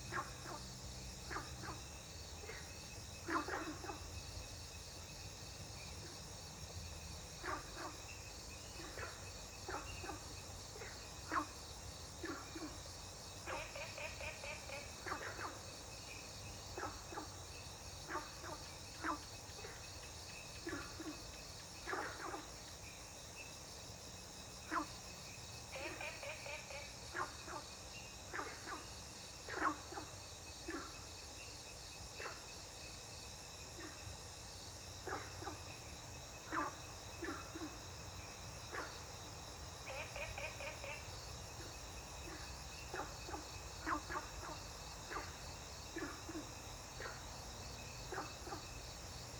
組合屋生態池, 埔里鎮桃米里 - Frogs chirping
Ecological pool, Frogs chirping
Zoom H2n MS+XY